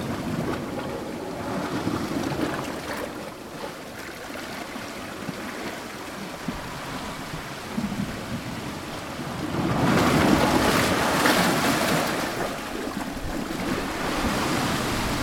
Callelongue, Marseille, France - trou mer
les goudes
cailloux
trou
mer
18 May 2012, ~16:00